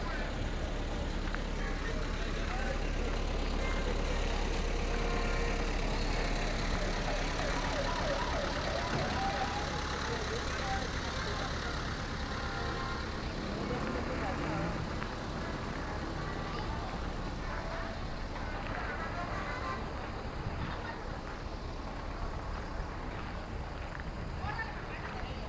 Chigorodó, Chigorodó, Antioquia, Colombia - Plaza de Chigorodó y alrededores
Soundwalk around Chigorodó's market place.
By the time the recording was made the market was already closing down. There wasn't any pre-established route. It was more a derive exercise in which I followed my ears everywhere.
Zoom H2n with a DIY stereo headset with Primo E172 mic capsules.
The entire collection of Chigorodó's recordings on this link